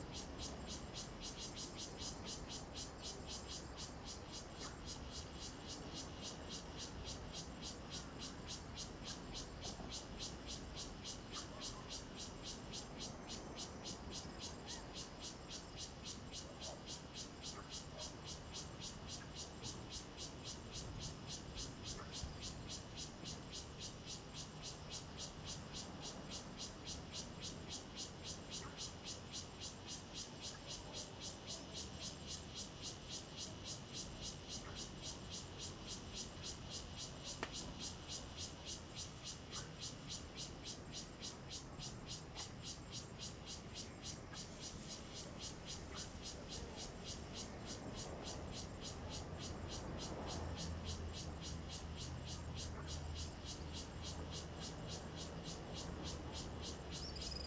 都蘭村, Donghe Township - Cicadas and Frogs
Cicadas sound, Frogs sound, Traffic Sound
Zoom H2n MS+ XY